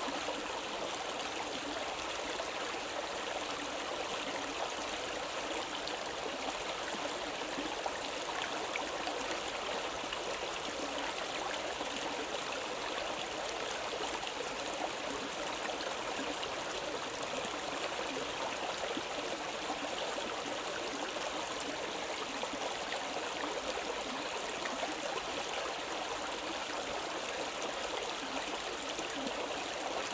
Annapolis, Subd. A, NS, Canada - Running stream swirling through a carpet of red autumn leaves